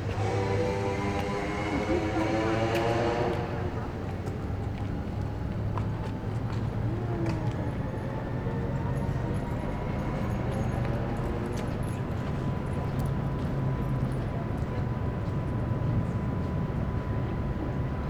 Schiffshebewerk Niederfinow - the city, the country & me: noise of the boat lift
noise of boat lift
the city, the country & me: september 5, 2010
5 September 2010, ~14:00